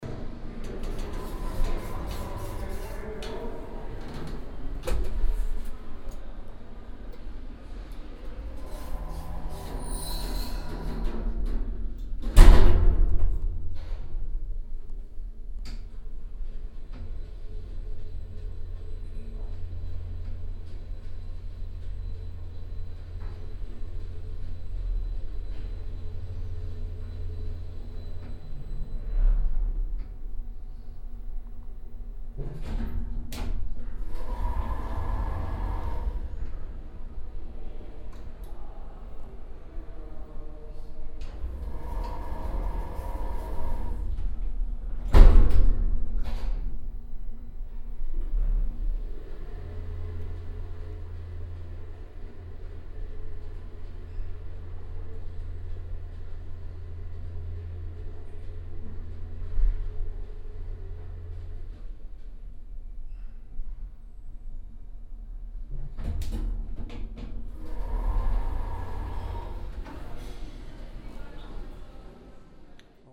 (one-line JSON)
{"title": "essen, VHS, elevator", "date": "2011-06-08 23:15:00", "description": "Driving with the elevator inside the VHS.\nEine Fhart mit dem Aufzug innerhalb der VHS.\nProjekt - Stadtklang//: Hörorte - topographic field recordings and social ambiences", "latitude": "51.45", "longitude": "7.01", "altitude": "84", "timezone": "Europe/Berlin"}